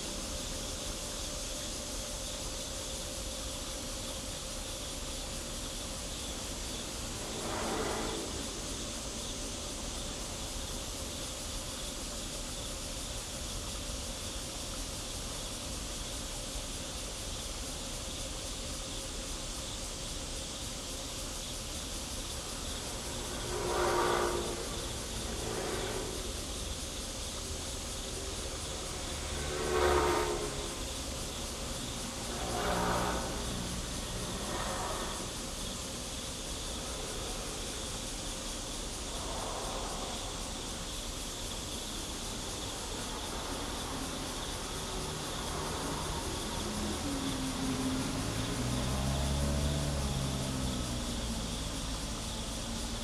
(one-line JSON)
{"title": "社子溪, Yangmei Dist., Taoyuan City - Next to the stream", "date": "2017-08-11 18:31:00", "description": "Next to the stream, Traffic sound, Insects, Cicadas, The train passes by, There is factory noise in the distance, Zoom H2n MS+XY", "latitude": "24.92", "longitude": "121.11", "altitude": "126", "timezone": "Asia/Taipei"}